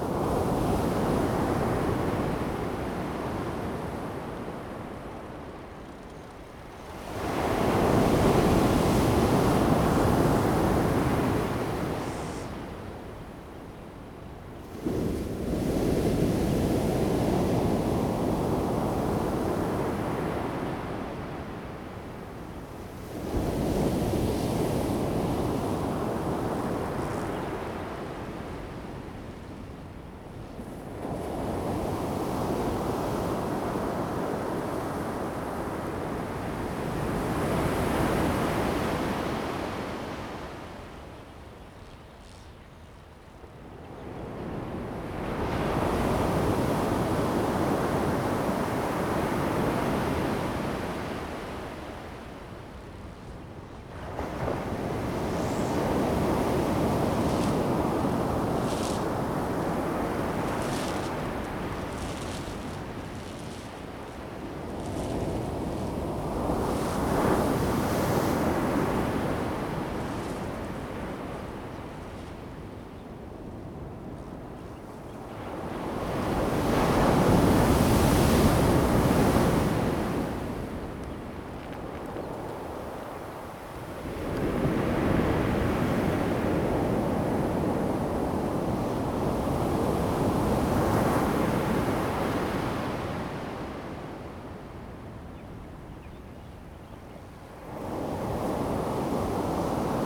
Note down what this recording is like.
At the beach, Sound of the waves, Zoom H2n MS+XY